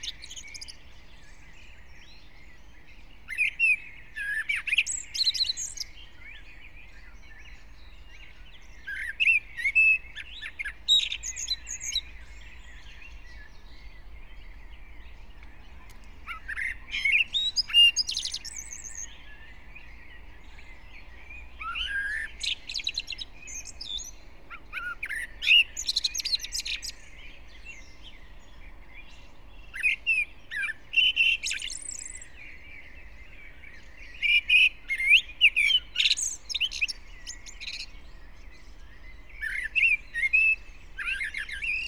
Brno, Lužánky - park ambience
04:30 Brno, Lužánky
(remote microphone: AOM5024/ IQAudio/ RasPi2)
Jihomoravský kraj, Jihovýchod, Česko